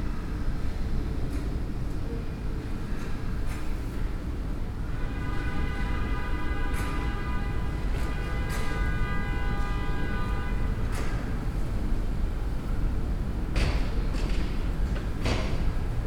Brussels, Rue Capouillet, résidence Cassiopée
Saint-Gilles, Belgium, 26 September 2011